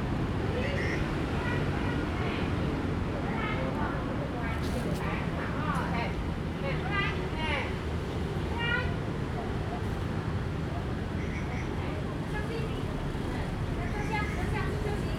臺南公園, 台南市東區 - in the Park
in the Park, Traffic sound, birds, The old man
Zoom H2n MS+XY
Tainan City, Taiwan, 18 February 2017, 16:15